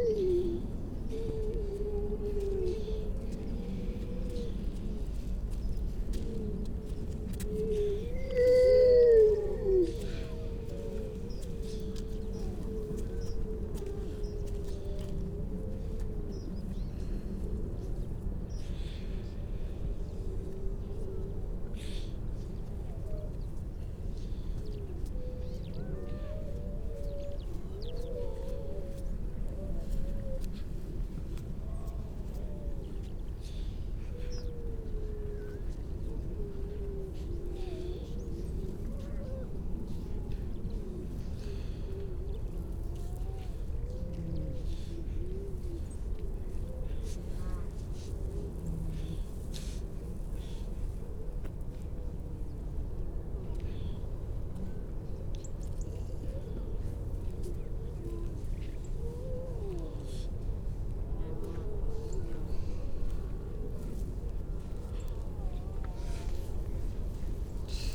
{"title": "Unnamed Road, Louth, UK - grey seals soundscape ...", "date": "2019-12-03 10:16:00", "description": "grey seal soundscape ... generally females and pups ... parabolic ... bird calls from ... brambling ... skylark ... dunnock ... robin ... chaffinch ... all sorts of background noise ...", "latitude": "53.48", "longitude": "0.15", "altitude": "1", "timezone": "Europe/London"}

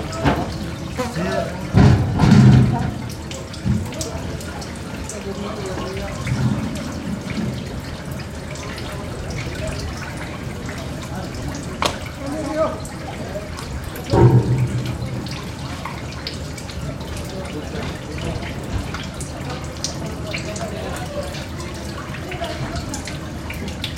{"title": "Sidi Ifni, Port, Fishing Port 2", "date": "2006-09-07 10:02:00", "description": "Africa, Morocco, Sidi Ifni, boat, port", "latitude": "29.36", "longitude": "-10.19", "timezone": "Africa/Casablanca"}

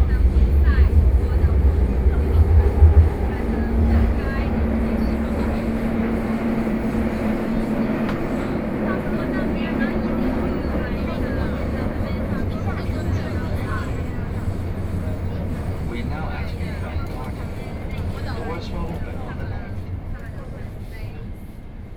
{"title": "Huangpu District, Shanghai - Line 10 (Shanghai Metro)", "date": "2013-11-28 15:54:00", "description": "from Laoximen station to North Sichuan Road station, erhu, Binaural recording, Zoom H6+ Soundman OKM II", "latitude": "31.24", "longitude": "121.48", "altitude": "10", "timezone": "Asia/Shanghai"}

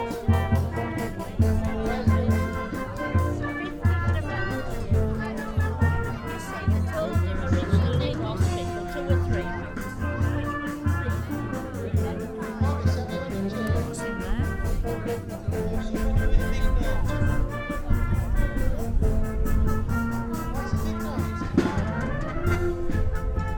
{"title": "Mackeridge Ln, York, UK - Farndale Show ... Silver band ...", "date": "2018-08-27 01:30:00", "description": "Farndale Show Bilsdale Silver band ... walk pass ... lavalier mics clipped to baseball cap ...", "latitude": "54.37", "longitude": "-0.97", "altitude": "147", "timezone": "GMT+1"}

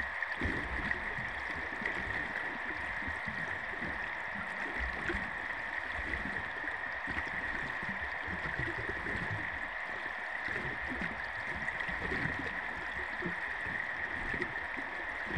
{"title": "Klaipėda, Lithuania, underwater machinery", "date": "2016-05-05 18:25:00", "description": "hydrophone recording. a lot of engine's sounds underwater", "latitude": "55.72", "longitude": "21.10", "timezone": "Europe/Vilnius"}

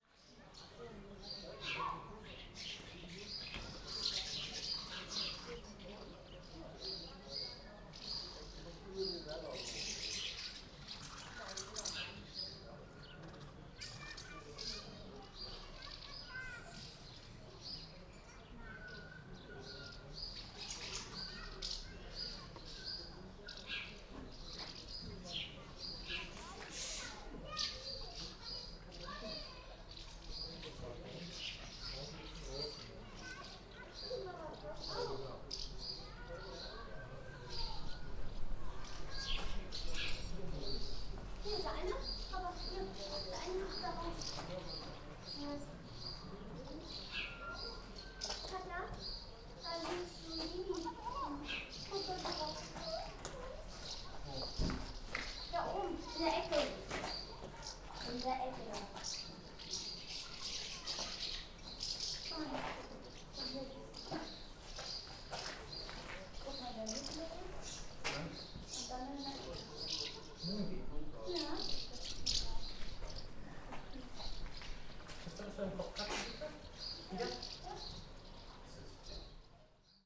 {"title": "Groß Neuendorf, Oder - house martins 2010", "date": "2010-05-23 13:10:00", "description": "colony of house martins at the harbour tower", "latitude": "52.70", "longitude": "14.41", "altitude": "9", "timezone": "Europe/Berlin"}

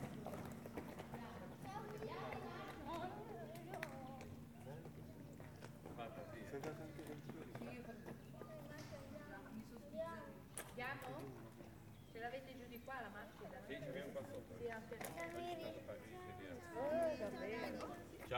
{"title": "San Salvatore GE, Italie - Basilica San Salvatore dei Fieschi", "date": "2016-10-30 12:15:00", "description": "On the forecourt of the church, after the service, children are running with the bells ring.\nSur le parvis de la basilique, après la messe, des enfants courent et les cloches sonnent.", "latitude": "44.33", "longitude": "9.36", "altitude": "27", "timezone": "Europe/Rome"}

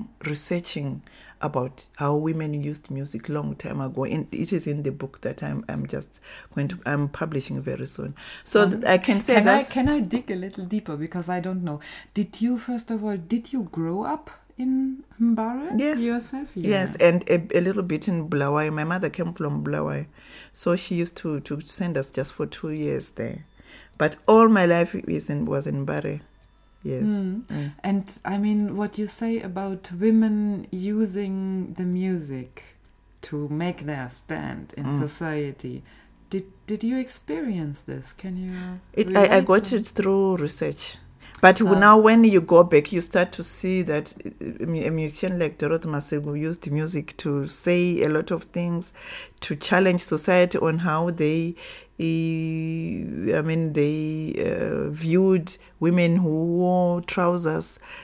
{"title": "Joyce Makwenda's Office, Sentosa, Harare, Zimbabwe - Joyce Makwenda’s passion for music, research, collecting…", "date": "2012-10-02 10:40:00", "description": "We are in Joyce Makwenda’s office which houses two rooms with her collection gathered in a life of creative production and research. She tells us how her passion for the arts, for listening to stories and for collecting initiates her into yet unknown activities like writing and filmmaking. Towards the end of the interview, she poignantly says, “it’s good we are part of a global culture and what not; but what do we bring to that global village…?”\nFind the complete recording with Joyce Makwenda here:\nJoyce Jenje Makwenda is a writer, filmmaker, researcher, lecturer and women’s rights activist; known for her book, film and TV series “Zimbabwe Township Music”.", "latitude": "-17.79", "longitude": "31.00", "altitude": "1491", "timezone": "Africa/Harare"}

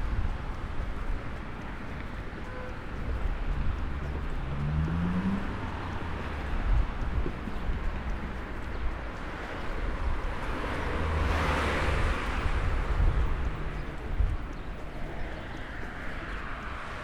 {"title": "Calea Calarasi, Bucharest", "date": "2011-11-22 14:07:00", "description": "walking on Calea Calarasi", "latitude": "44.43", "longitude": "26.13", "altitude": "81", "timezone": "Europe/Bucharest"}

